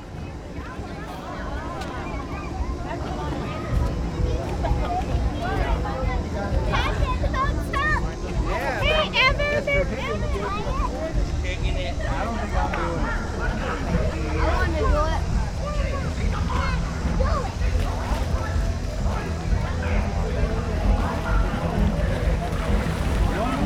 neoscenes: state fair water balls
CO, USA, August 2011